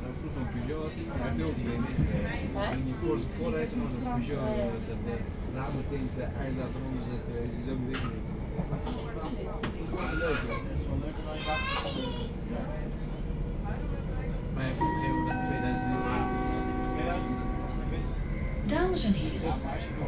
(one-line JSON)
{"title": "Delft, Nederland - In the Sprinter", "date": "2012-11-06 15:40:00", "description": "Inside the Sprinter train between Rotterdam, Delft and Den Haag.\n(Zoom H2 internal mics)", "latitude": "52.00", "longitude": "4.36", "altitude": "2", "timezone": "Europe/Amsterdam"}